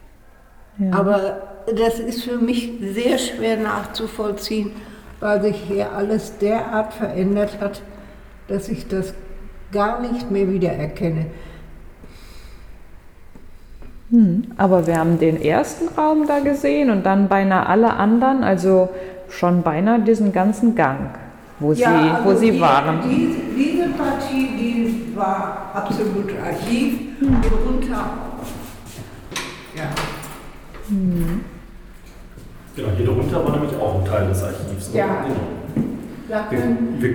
Amtshaus Pelkum, Hamm, Germany - Ilsemarie von Scheven talks local history in situ
Wir besuchen das Amtshaus Pelkum mit der ehemaligen Stadtarchivarin Ilsemarie von Scheven. Die 93-jährige führt uns entlang ihrer Erinnerungen durch das Gebäude. Treppenhaus und Flure wecken Erinnerungen; die meisten Räume weniger; Eine Reise entlang Frau von Schevens Erinnerungen in eine Zeit, als hier im Haus das Archiv der neuen kreisfreien Stadt Hamm untergebracht war bzw. unter den achtsamen Händen von zwei, Zitat von Scheven, „50-jährigen Seiteneinsteigerinnen ohne Fachausbildung“ wieder entstand; buchstäblich wie ein Phoenix aus der Asche. Ein „Ersatzarchive für die Stadt aufzubauen“ lautete der Auftrag der Frauen. Das Archiv der Stadt war in den Bomben des Zweiten Weltkriegs mit dem Stadthaus verbrannt; als einziges in Westfalen, wie Frau von Scheven betont.
Wo die Erinnerung uns verlässt, erkunden wir, was im Gebäude jetzt so alles zu finden ist. Der Bürgeramtsleiter selbst, Herr Filthaut gewährt uns Zutritt und begleitet uns.